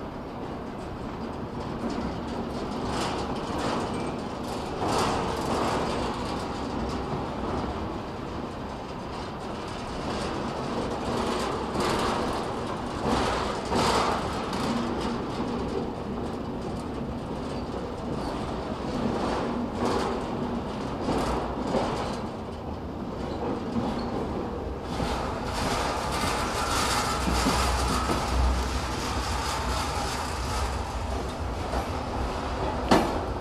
The bridge connecting the Romanian and Bulgarian banks of the Danube is of heavy steel. The train passes across the river, tossing long shadows on the water while the sounds of its ponderous weight is ground between rails and wheels.
Bulgaria